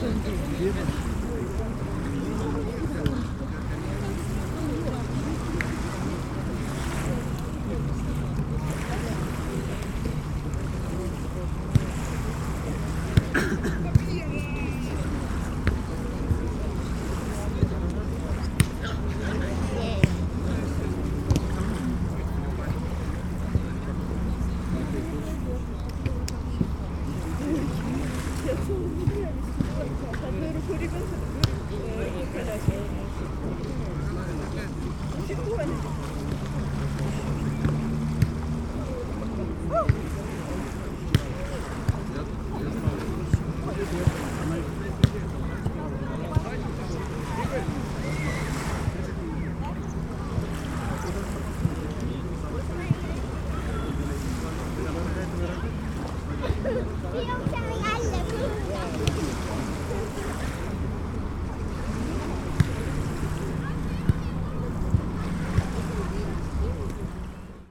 Pirita Beach Tallinn, sunny day activity
recording from the Sonic Surveys of Tallinn workshop, May 2010
Tallinn, Estonia, 21 May 2010, ~01:00